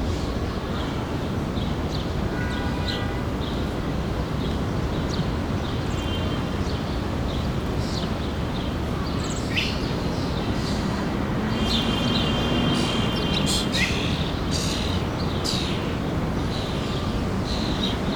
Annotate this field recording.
Central Park, bird sounds and traffic.